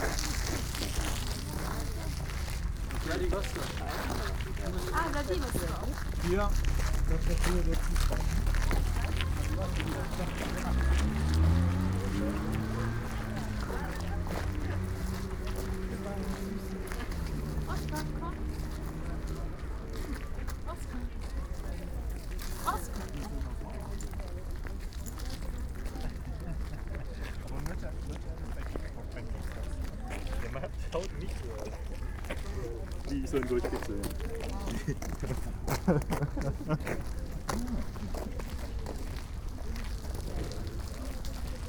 oderstraße/herfurthstraße: zufahrtstor zum flughafen tempelhof - tempelhof airport entrance
saturday, sunny winter afternoon ambience at the entrance of former tempelhof airport.